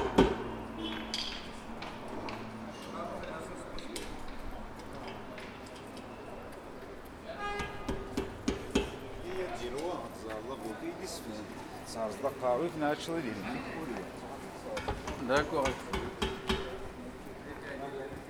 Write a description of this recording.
This recording is one of a series of recording mapping the changing soundscape of Saint-Denis (Recorded with the internal microphones of a Tascam DR-40).